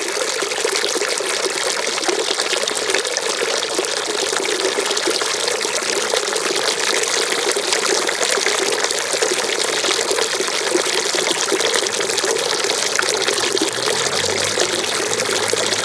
Sintra-Portugal, Penedo, Public Fountain
Public Fountain, water running